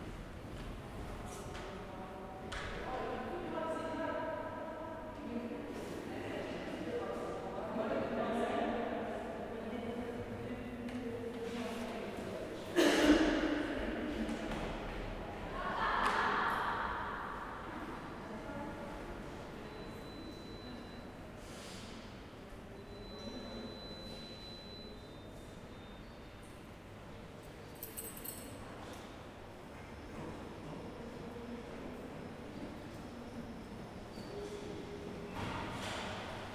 Nossa Senhora do Pópulo, Portugal - Near the stairs
Recorded with a ZoomH4N. Chatting, footsteps, doors, beeps.